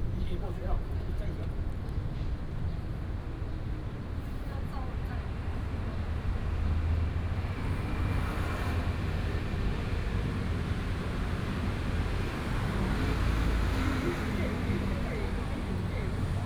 Ren’ai Park, Da’an Dist., Taipei City - in the Park
in the Park, Traffic Sound, Hot weather